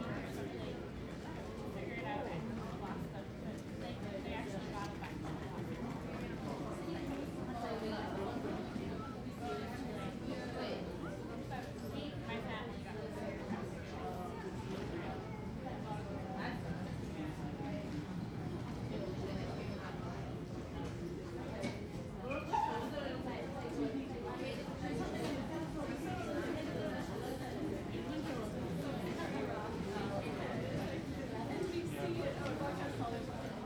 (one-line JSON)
{"title": "Montreal: Berri-UQAM bus terminal - Berri-UQAM bus terminal", "date": "2009-02-22 06:00:00", "description": "equipment used: Zoom H2", "latitude": "45.52", "longitude": "-73.56", "altitude": "27", "timezone": "America/Montreal"}